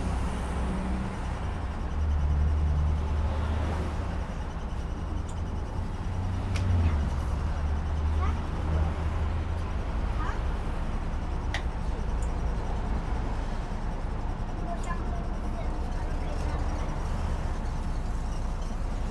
Poznan, Gorczyn, Glogowska Street, on the bus - bus ride towards Gorczyn bus depot
a short ride on an articulated bus, which cracks, rattles, squeal, grinds while it's moving and turning. conversations of passengers.